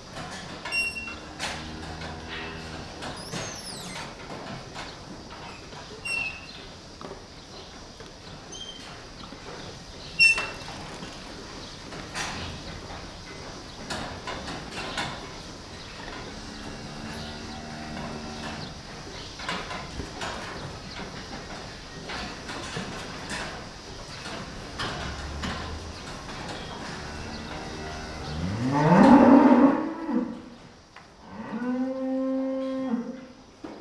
Geräusche aus dem Kuhstall: Kühe muhen, Kind fährt quietschendes Kettcar, im Hintergrund pumpt rhythmisch der Melkroboter /
Sounds coming from the cowshed: Cows mooing, child drives a whining pedal car, in the Background rhythmically pumping of the milking robot
Germany, Germany, 6 July 2014, 13:00